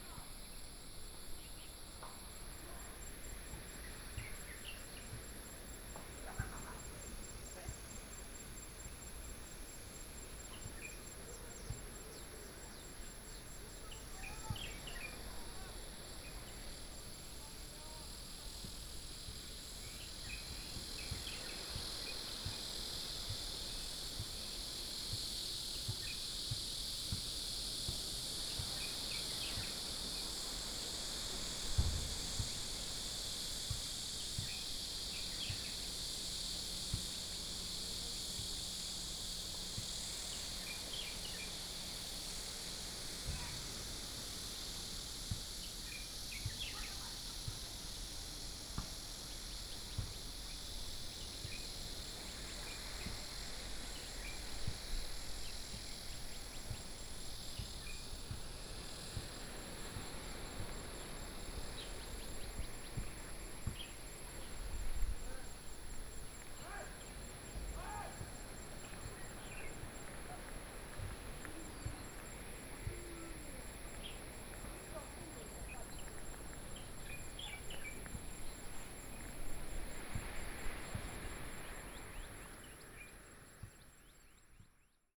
{"title": "無尾港水鳥保護區, Su'ao Township - Waterfowl Sanctuary", "date": "2014-07-28 18:11:00", "description": "In the Waterfowl Sanctuary, Hot weather, Birdsong sound, Small village, Cicadas sound, Sound of the waves", "latitude": "24.62", "longitude": "121.85", "altitude": "12", "timezone": "Asia/Taipei"}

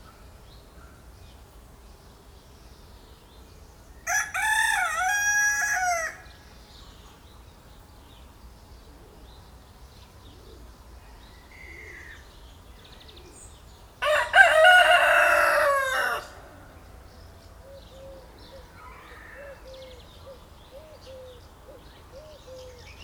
{"title": "Aizier, France - Poultry", "date": "2016-07-22 15:30:00", "description": "In Aizier near the Seine river, there's a garden where poultry is shouting unbridled ! This bucolic place is rural and it's relaxing.", "latitude": "49.43", "longitude": "0.63", "altitude": "8", "timezone": "Europe/Paris"}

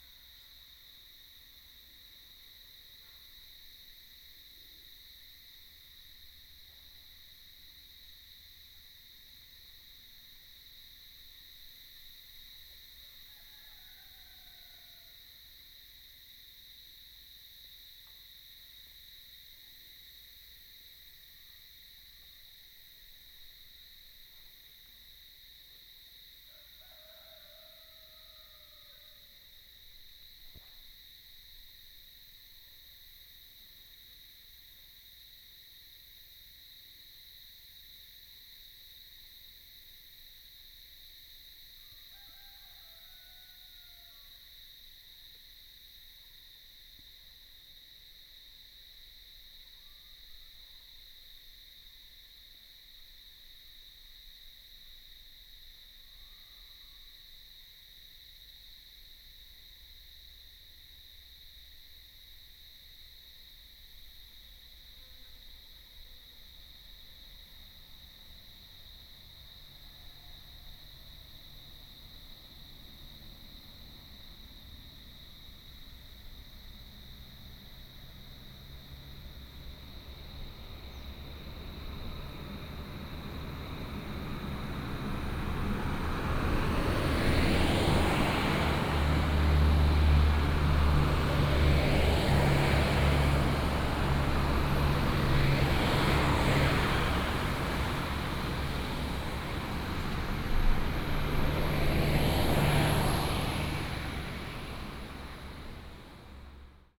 {"title": "南迴公路457K, Shizi Township, Pingtung County - beside the high road", "date": "2018-04-14 09:08:00", "description": "in the morning, bird sound, traffic sound, The woods beside the high road, Chicken roar, The sound of cicadas, Fly sound\nBinaural recordings, Sony PCM D100+ Soundman OKM II", "latitude": "22.24", "longitude": "120.83", "altitude": "385", "timezone": "Asia/Taipei"}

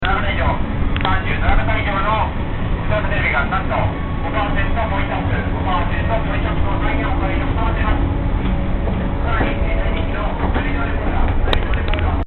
{"title": "entrance at yodabashi camera at 6 p.m 17.12.2007 - advertising at yodabashi camera 6 p.m/17.12.07", "latitude": "35.69", "longitude": "139.70", "altitude": "55", "timezone": "GMT+1"}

